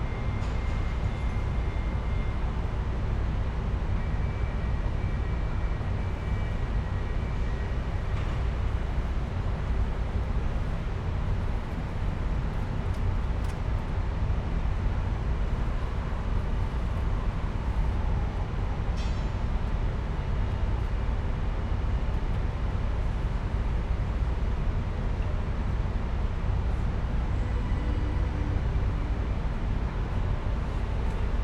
Wrocław Główny - main station ambience track1
Wrocław Główny main station, short snippet of station ambience, heard from a train window
(Sony PCM D50, Primo EM172)